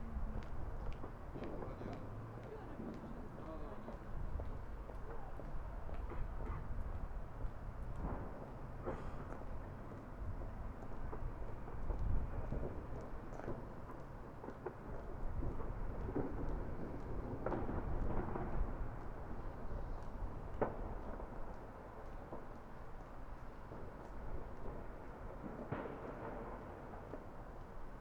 Poznan, Piatkowo district, ul. Mateckiego, balcony north end - new year's eve midway
quiet streets, no traffic, everybody inside, subtle wind, particles at rest. pure, distant fireworks blasts echoing in the freezing air.
Poznan, Poland, 31 December, 21:31